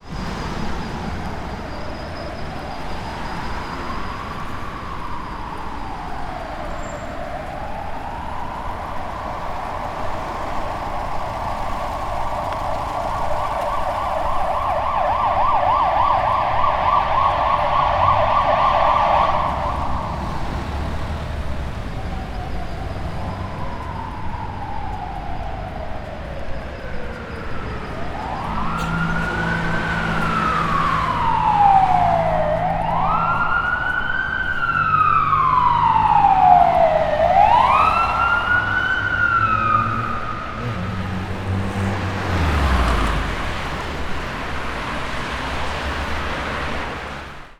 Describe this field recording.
Fire Engine passing by, traffic, Boulevard